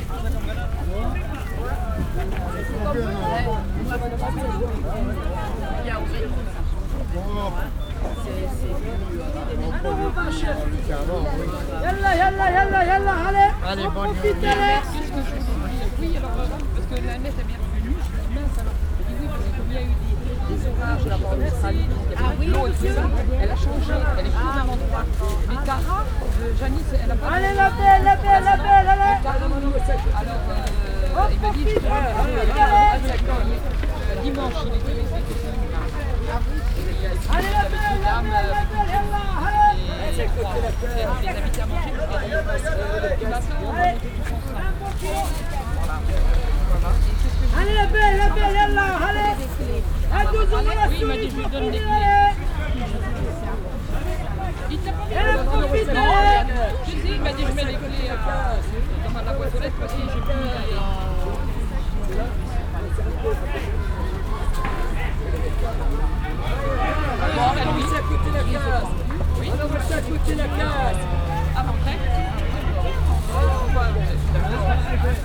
Paris, Marché Richard Lenoir, Market ambience
Marché Richard Lenoir. General ambience.
Paris, France, 20 May